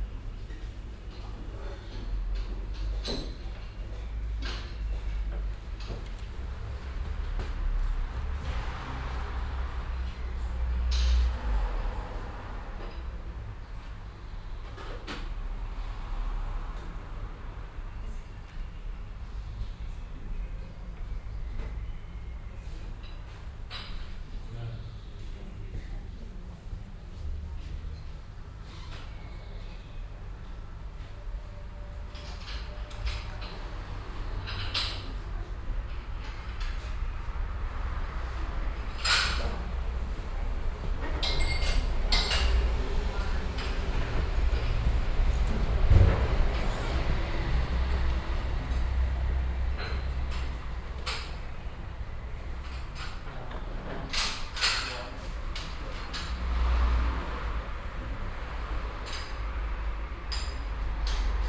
cologne, aachenerstrasse, cafe schmitz
soundmap: köln/ nrw
cafe schmitz, morgens, geschirr geräusche, kaffee zubereitung, hintergrungsverkehr der aachener strasse
project: social ambiences/ listen to the people - in & outdoor nearfield recordings - listen to the people